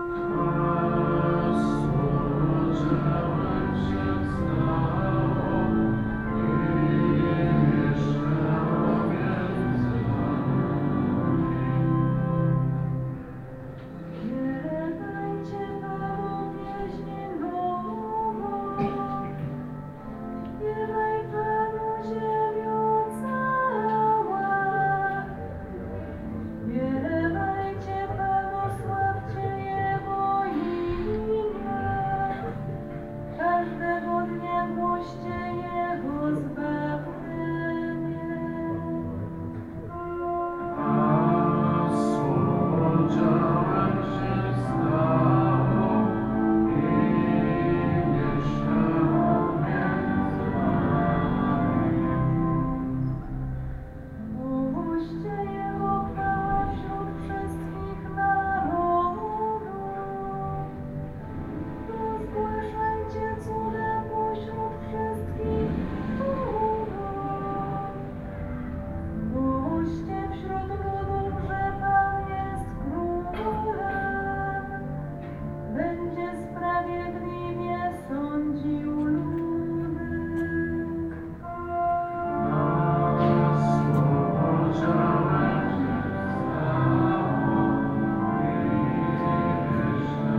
Franciscans chruch, Przemyśl, Poland - (75 BI) Prayers
Binaural recording of prayers at the door of Franciscan's Church entrance during a sermon on the first of Catholic Christmas.
Recorded with Soundman OKM on Sony PCM D-100